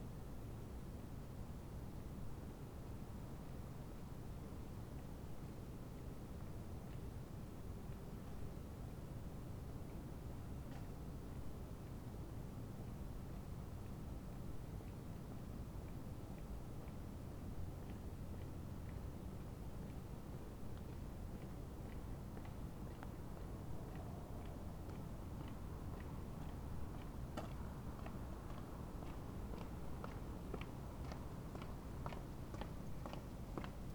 Berlin: Vermessungspunkt Friedel- / Pflügerstraße - Klangvermessung Kreuzkölln ::: 26.10.2011 ::: 03:12
26 October 2011, 03:12